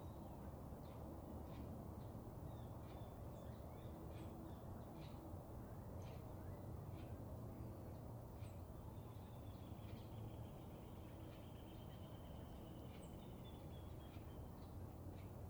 Camino de Hueso, Mercedes, Buenos Aires, Argentina - Del Campo a la Ruta 2
Recorriendo el Camino de Hueso, desde los límites rurales de Mercedes hasta la Ruta Nacional 5